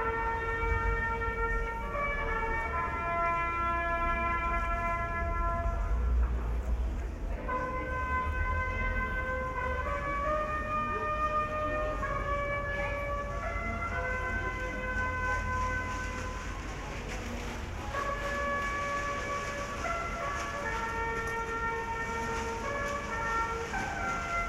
Bugle Call, Rynek, Tarnów, Poland - (668 BI) Hourly Bugle call at Tarnów market square
Binaural recording of an hourly bugle call at market square in Tarnów. Unfortunately it occurred a few minutes earlier than it supposed to.
Recorded with Sound Devices MixPre 6 II and DPA 4560.